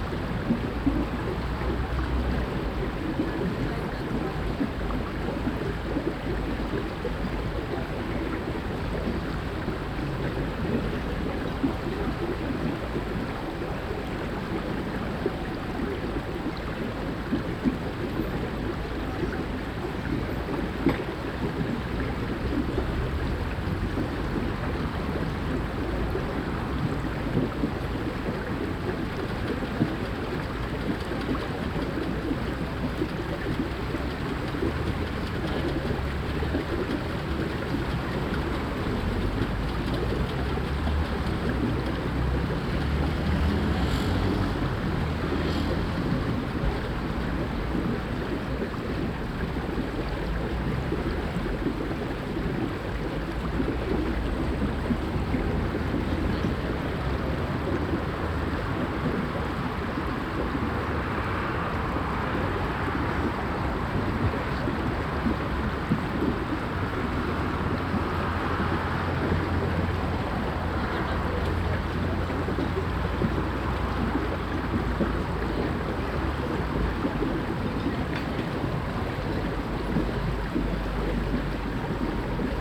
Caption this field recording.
A waterspout fountain (near the bubbly drainage), a cafe with clattering tableware and chatting people at a busy crossroads, traffic, wind shaking ropes on flagpoles, in a distance workers building a stage for a campus festival, some gulls crying. Binaural recording, Zoom F4 recorder, Soundman OKM II Klassik microphones with wind protection